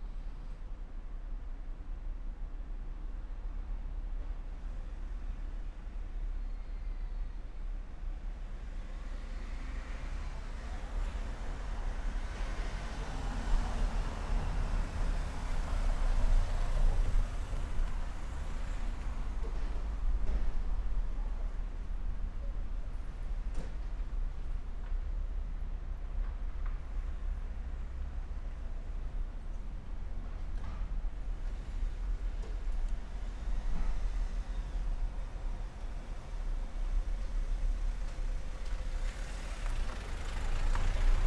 Beco Toucinheiros, Lisboa, Portugal - Crossing Trains
Traffic noise, people, 2 trains passing under metal bridge. Recorded with 2 omni Primo 172 DIY capsules (AB spaced stereo - 2 meters) into a SD mixpre6.